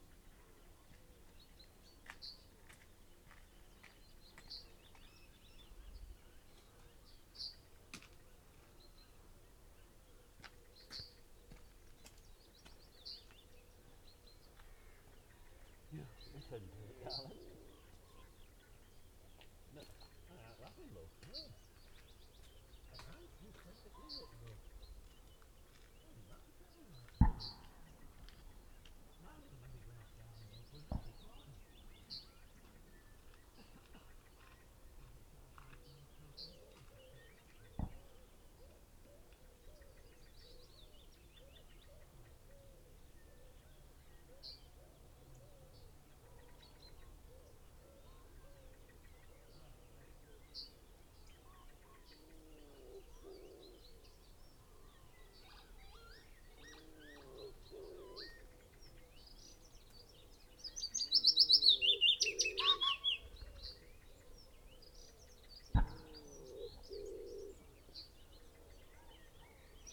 Green Ln, Malton, UK - willow warbler song soundscape ...
willow warbler song soundscape ... dpa 4060s clipped to bag in crook of tree to Zoom H5 ... bird song ... calls ... wren ... pheasant ... blackcap ... chaffinch ... wood pigeon ... blackbird ... yellowhammer ... crow ... greylag goose ... herring gull ... lapwing ...
3 May